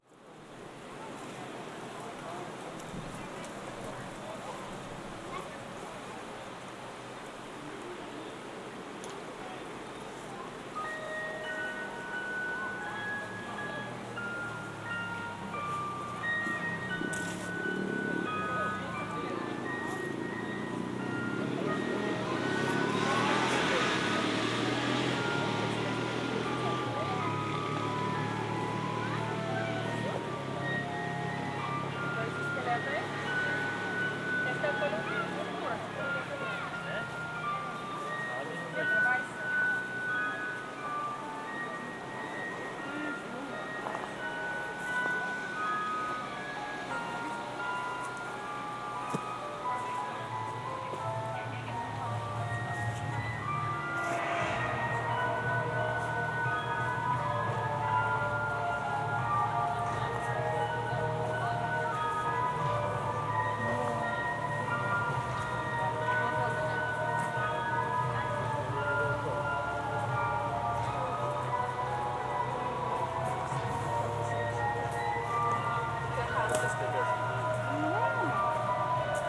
Anyksciai, Lithuania, Halloween park
Walk through Halloween amusement park. Sennheiser ambeo headset.
1 November 2021, ~14:00